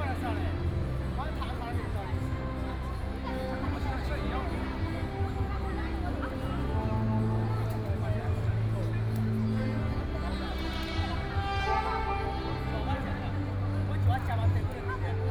Nanjin Road, Shanghai - Sitting in a noisy district
Sitting in a noisy district, Extremely busy department store area, Quarrel between two cleaning staff, Binaural recording, Zoom H6+ Soundman OKM II